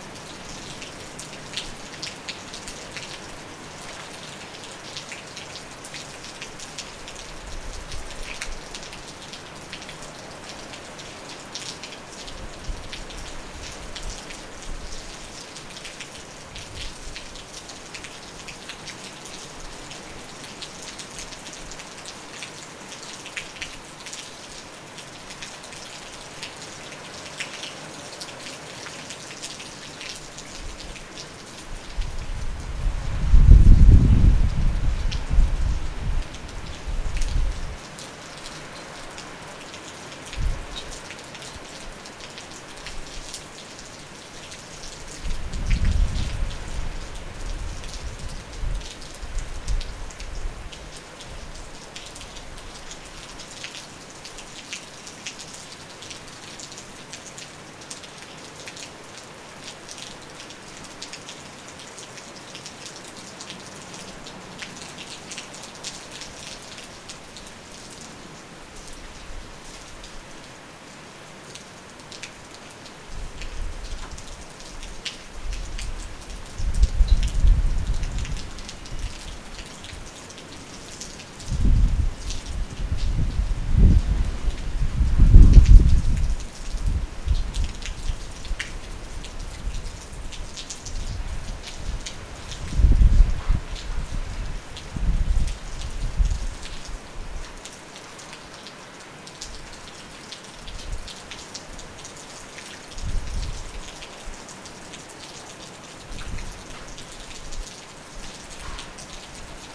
Rúa Cansadoura, Nigrán, Pontevedra, Spain - Elsa storm
heavy rain and thunderstorm by Elsa
Pontevedra, Galicia / Galiza, España